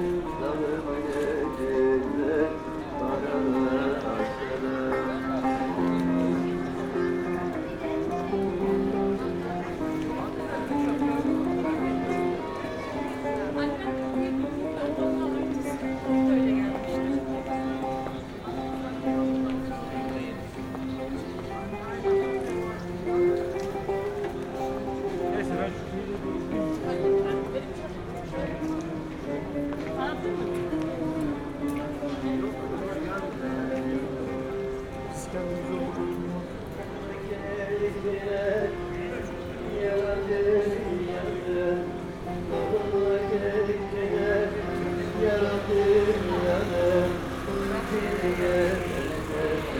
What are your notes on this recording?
street life on Istiklal near Tünel. An old blind musician sings here. His son hold the microphone for him.